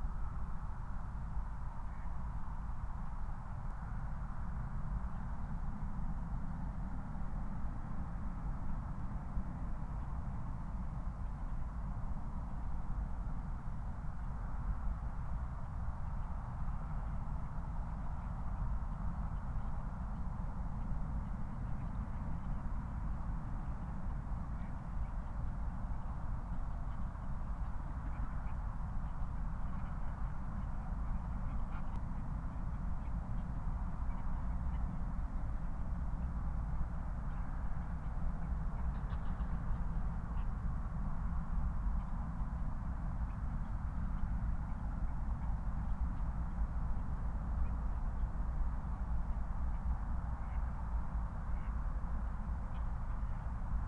Moorlinse, Berlin Buch - near the pond, ambience
05:19 Moorlinse, Berlin Buch